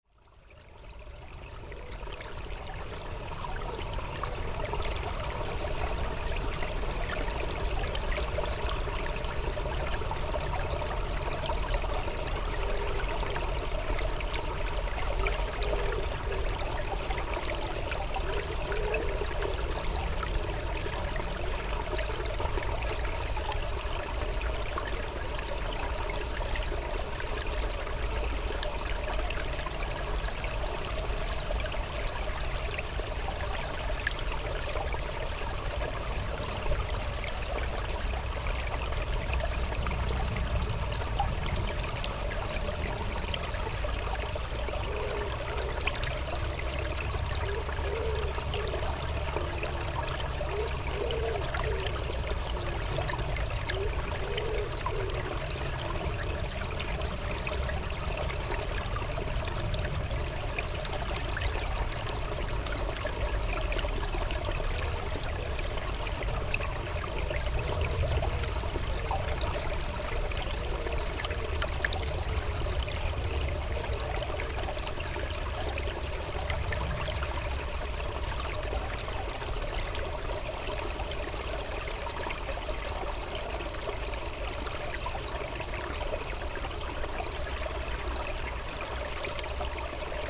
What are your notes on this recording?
The river "Malaise", in the woods called Bois des Rêves. Two doves talking, planes and a quiet river.